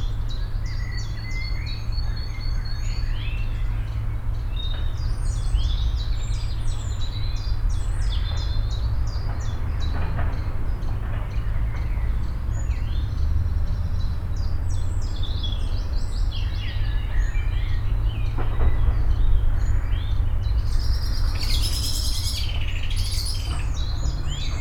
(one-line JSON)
{"title": "Geinegge, Hamm, Germany - borderline mix at the Geinegge", "date": "2015-05-08 14:55:00", "description": "i’m sitting on a bench right at a local stream know as “Geinegge”; it’s a small strip of land along the stream, in parts even like a valley, re-invented as a kind of nature reserve… immediately behind me begins a seizable industrial area… listening to the seasonal mix the borderline creates...", "latitude": "51.70", "longitude": "7.78", "altitude": "63", "timezone": "Europe/Berlin"}